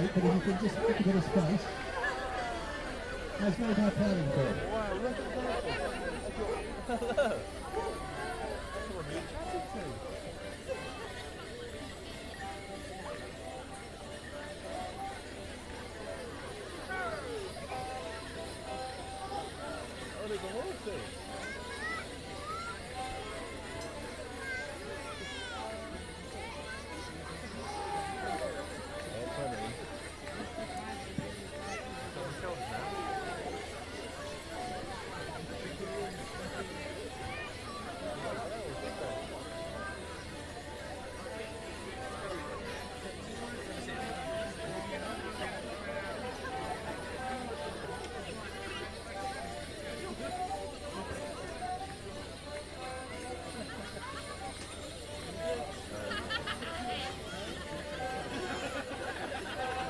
The Street, South Stoke, UK - Crowning the May Queen
This is the sound of the Kennet Morris Men and the children of South Stoke Primary School crowning the May Queen and officially declaring the beginning of the summer.